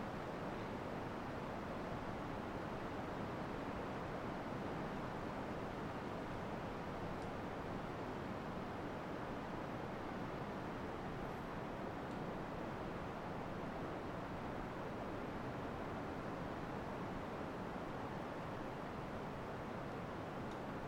Valdivia, Chili - LCQA AMB VALDIVIA FOREST PIN WIND AIRY BIRDS MS MKH MATRICED
This is a recording of a pin forest, located between Valdivia y Curiñanco. I used Sennheiser MS microphones (MKH8050 MKH30) and a Sound Devices 633.
26 August 2022, 16:30